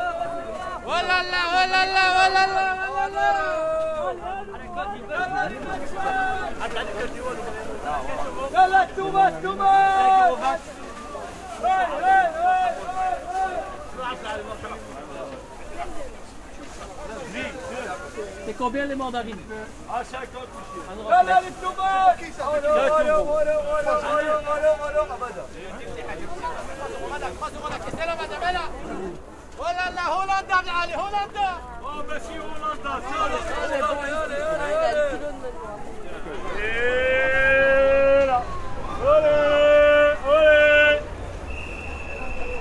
Marché du Midi, Bruxelles /Brussels Market
Sellers in Marché du Midi, trying to attract customers.Gare du Midi, Brussels, Euro Euro Euro
Saint-Gilles, Belgium